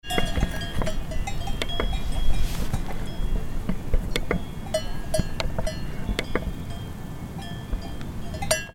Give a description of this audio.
Kuhglocken, Alpsommer, keine Hirten sichtbar, elektrischer Zaun, ein Bergmarathonläufer kreuzt, so schnell sind wir nicht.